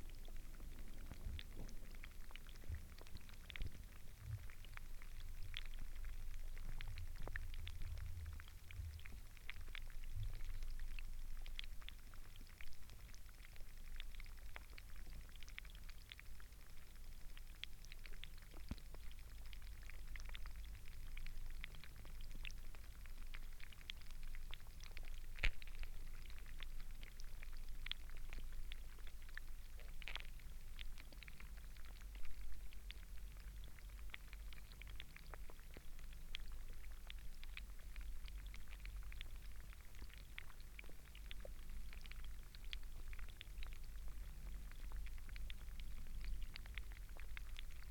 Nationale Park Hoge Veluwe, Netherlands - Deelensewas underwater
2 Hydrophones. water stuff (?) and helicopter.
Ede, Gelderland, Nederland, 26 May 2020